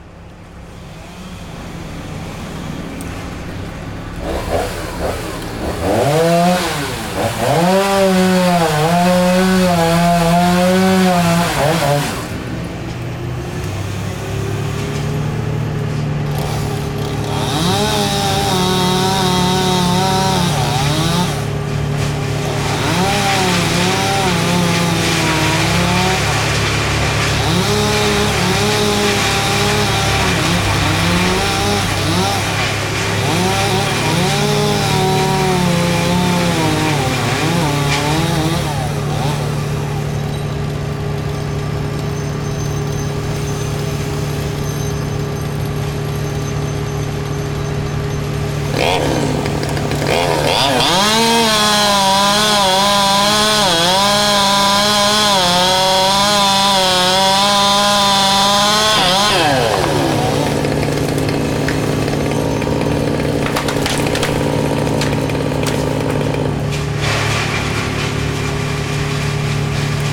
{"title": "Emerald Dove Dr, Santa Clarita, CA, USA - Chainsaws & Workers", "date": "2020-05-21 07:45:00", "description": "Chainsaws were out early this morning removing dead trees around the property. Close up binaural recording.", "latitude": "34.41", "longitude": "-118.57", "altitude": "387", "timezone": "America/Los_Angeles"}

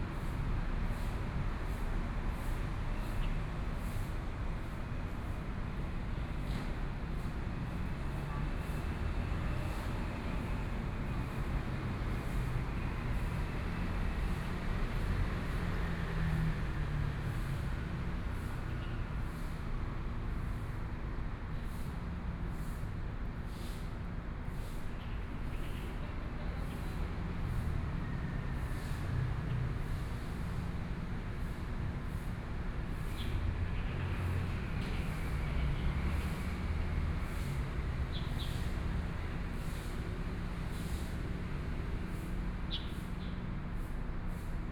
{"title": "JinBei Park, Taipei City - Morning in the park", "date": "2014-02-27 07:44:00", "description": "Morning in the park, Traffic Sound, Environmental sounds, Birdsong\nBinaural recordings", "latitude": "25.06", "longitude": "121.54", "timezone": "Asia/Taipei"}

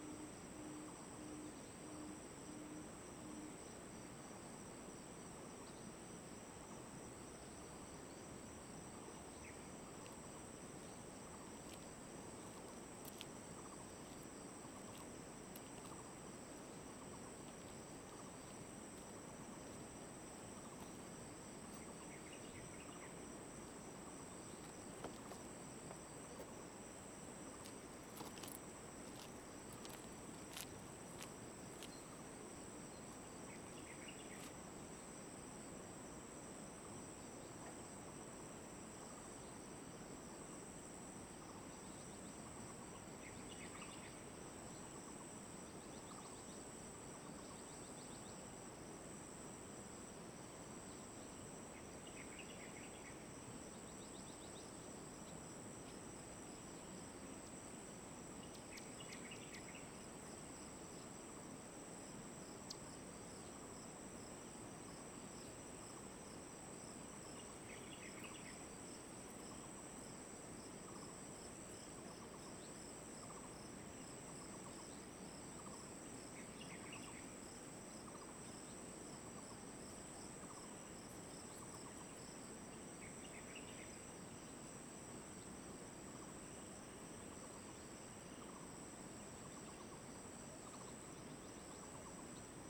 {"title": "Liqiu, Jinfeng Township - Farmland in the Valley", "date": "2018-04-01 17:19:00", "description": "stream, New agricultural land in aboriginal, Bird call, Farmland in the Valley\nZoom H2n MS+XY", "latitude": "22.52", "longitude": "120.92", "altitude": "78", "timezone": "Asia/Taipei"}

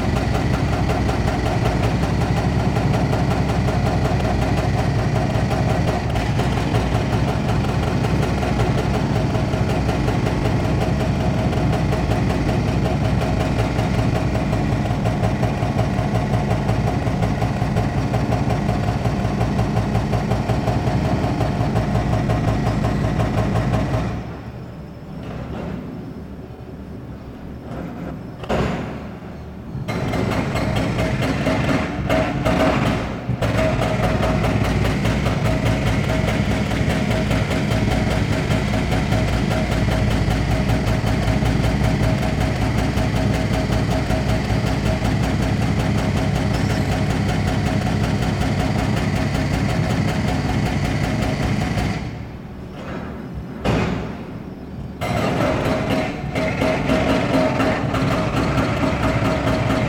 {"title": "Ben Yehuda St, Jerusalem, Israel - Ben Yehuda Street while demolishing a building", "date": "2020-05-08 12:00:00", "description": "Ben Yehuda Street while demolishing a building from a balcony in the 3rd floor.\nrecorder by zoom f1. friday noon.", "latitude": "31.78", "longitude": "35.22", "altitude": "799", "timezone": "Asia/Jerusalem"}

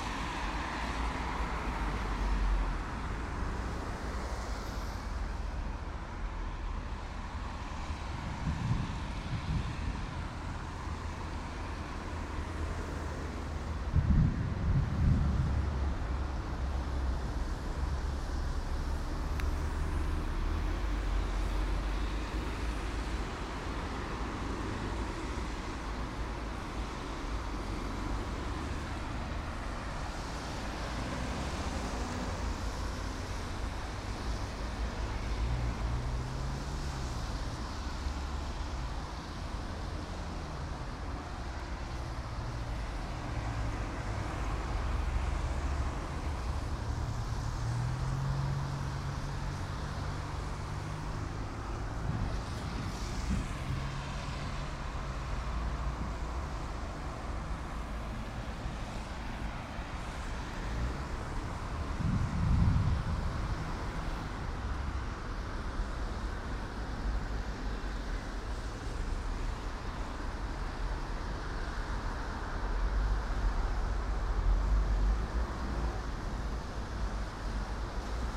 {"title": "Nova Gorica, Slovenija - Zvoki krožnega", "date": "2017-06-06 17:32:00", "description": "Cars driving round the roundabout.", "latitude": "45.96", "longitude": "13.65", "altitude": "108", "timezone": "Europe/Ljubljana"}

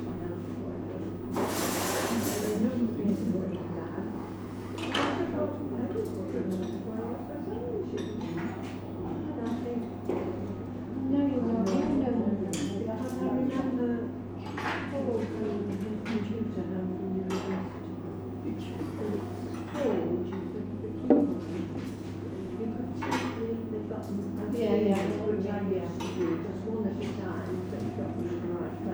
{"title": "Theatre Cafe, Malvern, UK - Theatre Cafe", "date": "2022-01-25 15:31:00", "description": "A long real time recording experience. I am in the large cafe of the theatre late on a cold afternoon. On the left a girl behind the counter is busy, in front two ladies talk and a man carries glasses to lay tables on the right. Various people pass by. Eventually I finish my cake and coffee and walk over to watch a video with music then out into the street where a busker plays a recorder. Finally I walk down an alley to the car park followed by a woman pushing a noisey shopping trolley.\nMixPre 6 II with two Sennheiser MKH 8020s in a rucksack.", "latitude": "52.11", "longitude": "-2.33", "altitude": "120", "timezone": "Europe/London"}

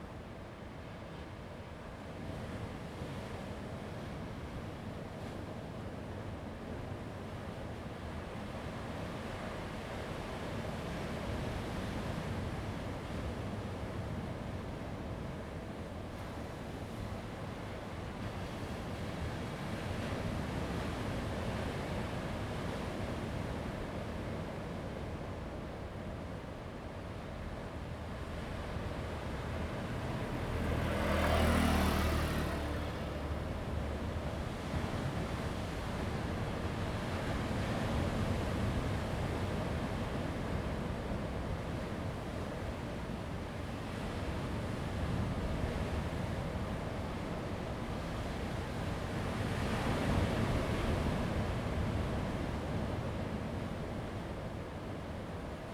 {"title": "公舘村, Lüdao Township - Inside a small cave", "date": "2014-10-30 15:49:00", "description": "Inside a small cave, Sound of the waves\nZoom H2n MS +XY", "latitude": "22.64", "longitude": "121.50", "altitude": "9", "timezone": "Asia/Taipei"}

